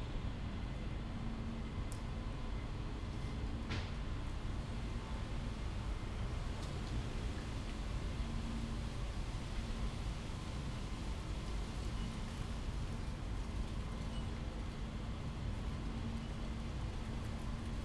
Berlin Bürknerstr., backyard window - storm approaching
autumn morning, a storm is approaching, unusual sounds in the backyard, unquiet air
(Sony PCM D50, Primo EM172)